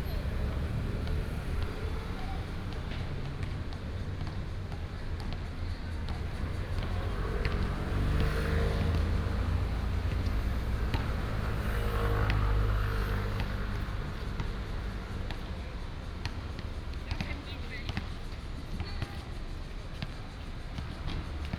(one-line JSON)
{"title": "Shanghai Rd., Pingzhen Dist. - Basketball court", "date": "2017-08-04 16:22:00", "description": "Basketball court, Traffic sound", "latitude": "24.92", "longitude": "121.21", "altitude": "163", "timezone": "Asia/Taipei"}